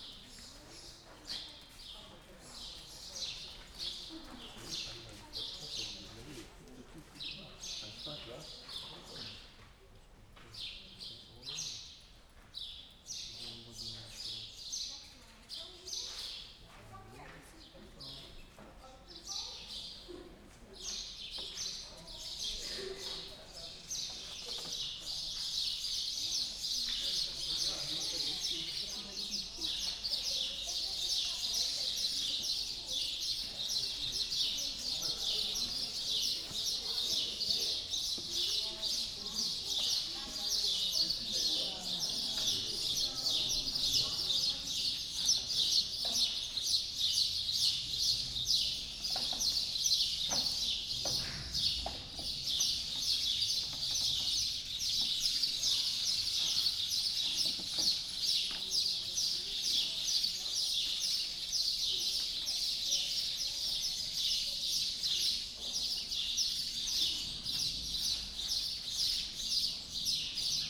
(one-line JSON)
{"title": "Ħaġar Qim temple, Malta - sparrows under tent", "date": "2017-04-06 13:00:00", "description": "Ħaġar Qim temple, Malta, the place is covered by a big tent, which protects not only the temple against erosion, but also gives shelter to many sparrows.\n(SD702, DPA4060)", "latitude": "35.83", "longitude": "14.44", "altitude": "130", "timezone": "Europe/Malta"}